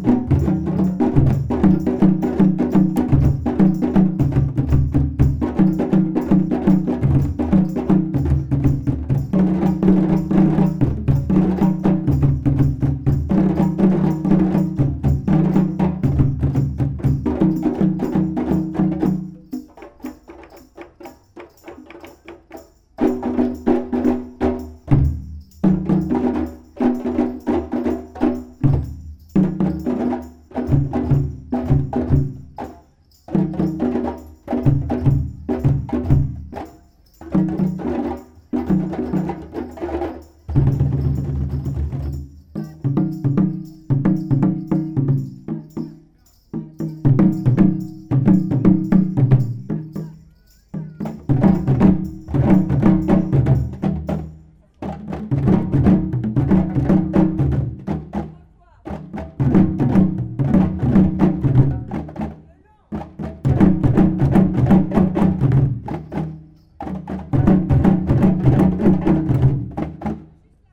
During the annual feast of Court-St-Etienne called the braderie, some people were freely gathered in this blind alley and played djembe. This is mandingue music, coming from west cost Africa (Mali, Guinea). They play loudly and lot of people stop their walk in the flea market to listen to them. The troop is called 'Culture mandingue'.